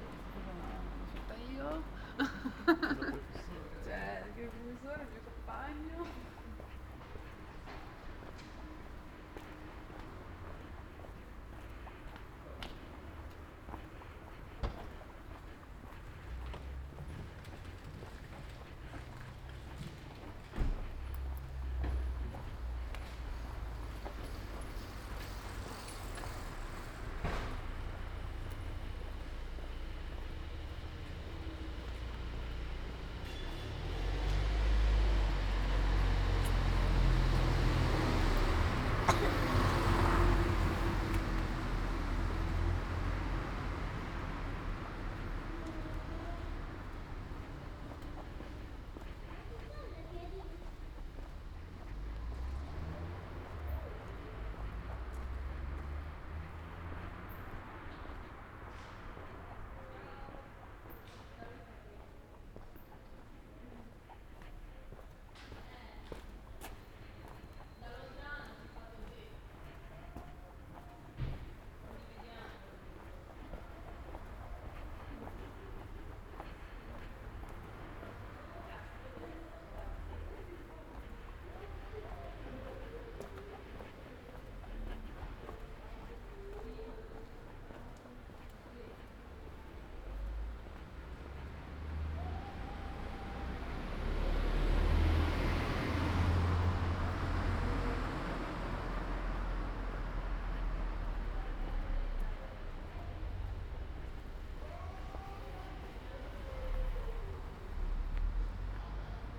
Ascolto il tuo cuore, città. I listen to your heart, city. Chapter V - Supermercato serale ai tempi del COVID19 Soundwalk
Thursday March 12 2020. Walking in San Salvario district, Turin two days after emergency disposition due to the epidemic of COVID19.
Start at 8:23 p.m. end at 9:00 p.m. duration of recording 36'42''
The entire path is associated with a synchronized GPS track recorded in the (kml, gpx, kmz) files downloadable here:
Piemonte, Italia, March 2020